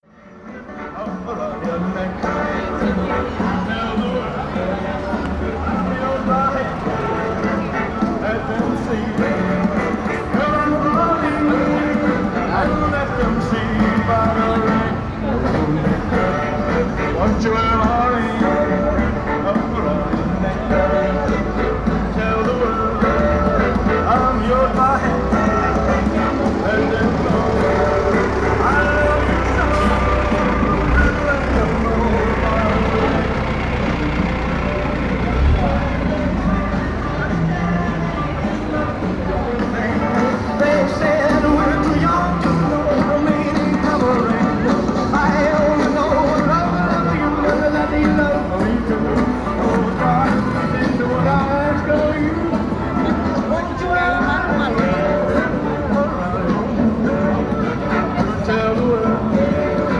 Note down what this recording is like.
Panorama sonoro: um artista de rua imitava o músico norte-americano Elvis Presley, sábado pela manhã, em meio ao Calçadão, como forma de conseguir dinheiro de contribuições voluntárias de pedestres. Ele se vestia e procurava dançar como Elvis, utilizando uma caixa de som para reproduzir suas músicas. A apresentação atraia a atenção dos pedestres, que sorriam, comentavam e, até mesmo, tiravam fotos com o artista. Algumas contribuíam com algum dinheiro. Nas proximidades, um estabelecimento bancário era reformado e uma feirinha de produtos artesanais acontecia. Sound panorama: A street performer imitated American musician Elvis Presley on Saturday morning in the middle of the Boardwalk as a way to get money from voluntary pedestrian contributions. He dressed and sought to dance like Elvis, using a sound box to play his music. The presentation attracted the attention of pedestrians, who smiled, commented and even took pictures with the artist. Some contributed some money.